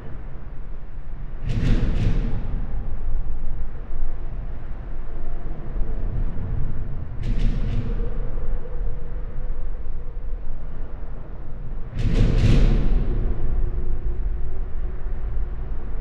Rodenkirchner Autobahnbrücke, Weidenweg, Köln - under the bridge
deep drones below the highway bridge (Rodenkirchener Autobahnbrücke)
(Sony PCM D50, Primo EM172)